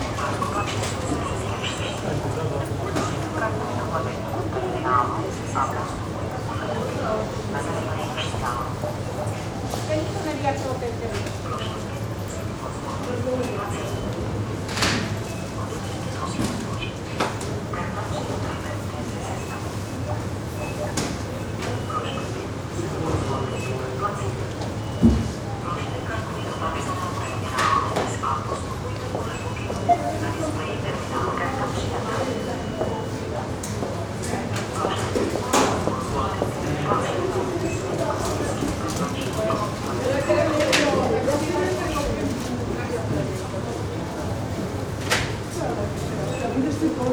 Dornych, Brno-střed, Česko - Walk Through Checkout Lines
Recorded on Zoom H4n + Rode NTG 1, 26.10.
Jihovýchod, Česko, 26 October